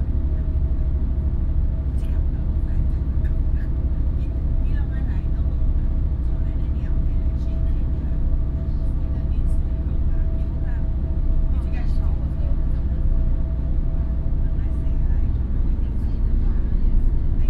Neiwan Line, 新竹縣竹東鎮 - Old train inside
Old train inside, Neiwan Line